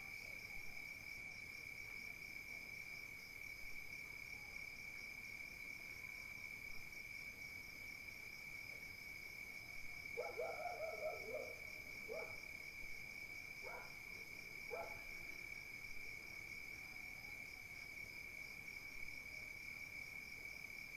Chaude nuit d'été, les chiens se sont tenus à peu près tranquille c'est pas souvent que ça arrive. Évité de mettre le micro trop près de l'herbe, mais sur le balcon de la maison, car sinon les sauterelle conocéphales saturent l'enregistrement.
Ce mois de janvier est particulièrement chaud. Il fait 17° à 1400m et 20° à 1100m (la nuit)
fichier de 40mn (1h ne passe pas) recadré avec audacity 320 kb/s
Prise de son ZoomH4N niveau 92
Réunion